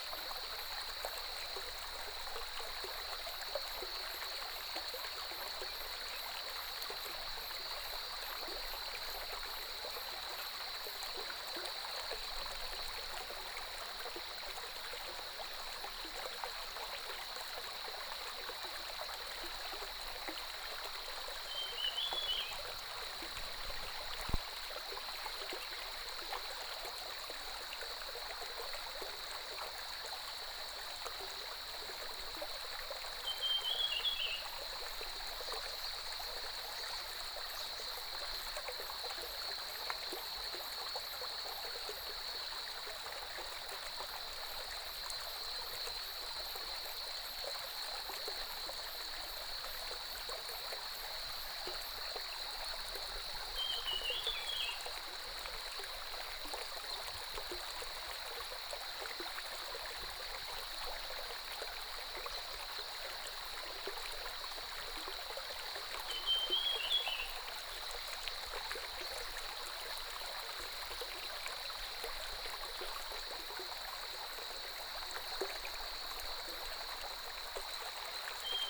中路坑溪, Puli Township - Walking along the stream
Walking along the stream, The sound of water streams, Bird calls, Crowing sounds, Cicadas cry